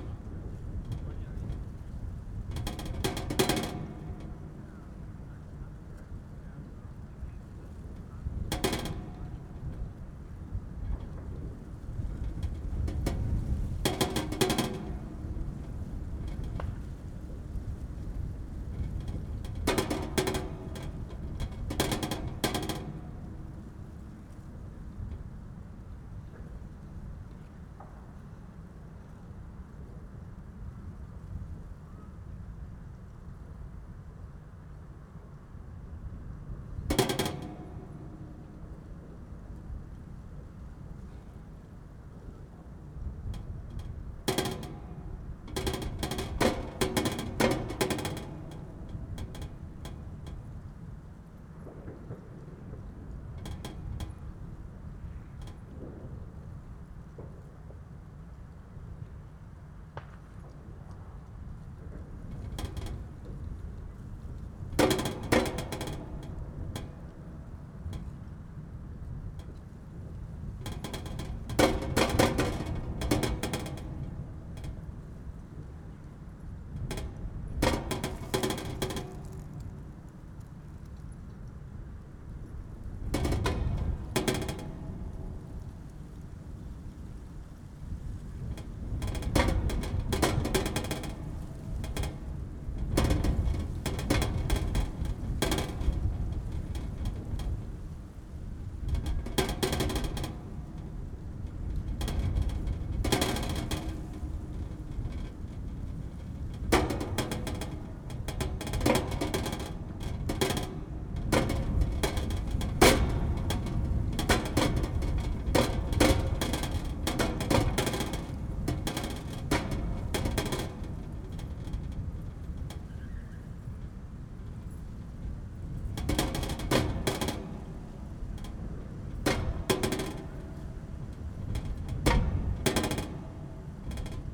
Tempelhofer Feld, Berlin - fence, metal plate rattling
a metal plate attached to the fence rattles in the wind, microphones very close.
(SD702, DPA4060)
Berlin, Germany, December 2012